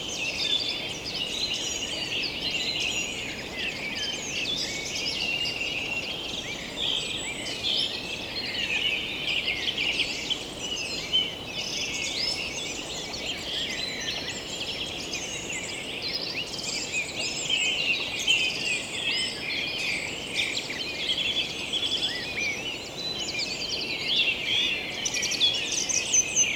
Beaufort, France - Birds waking up during summer time
They began 4:38 AM ! I'm sleeping outside, a great night just left alone on the green grass. And... ok, it's summer time and they began early ! Smashed, I just have a few forces to push on... and let the recorder work. It's a beautiful morning with blackbirds.